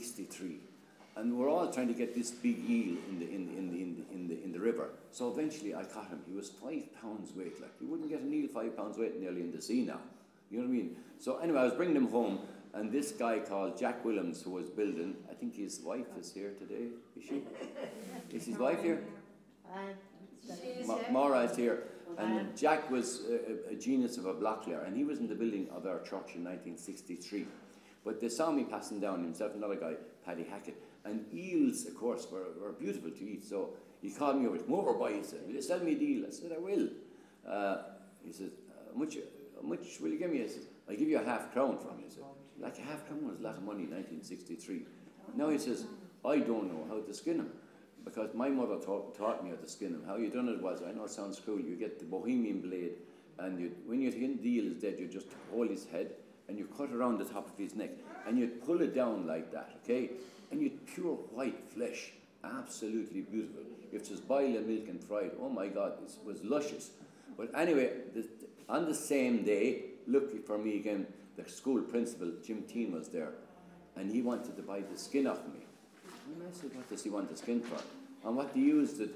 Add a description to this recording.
Stefan recalls his memories of the River Suir at Ardfinnan. Recorded as part of the Sounding Lines visual art project by Claire Halpin and Maree Hensey which intends to isolate and record unusual and everyday sounds of the River Suir in a visual way. Communities will experience a heightened awareness and reverence for the river as a unique historical, cultural and ecological natural resource. The artists will develop an interactive sound map of the River which will become a living document, bringing the visitor to unexpected yet familiar places.